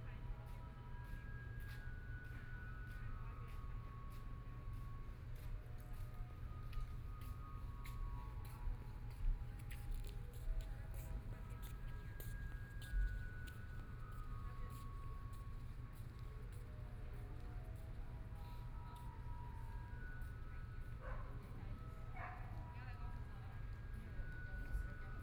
Taitung City, Taiwan - soundwalk
Walking on abandoned railroad tracks, Currently pedestrian trails, Dogs barking, Garbage truck music, Bicycle Sound, People walking, Binaural recordings, Zoom H4n+ Soundman OKM II ( SoundMap2014016 -21)
Taitung County, Taiwan, 16 January 2014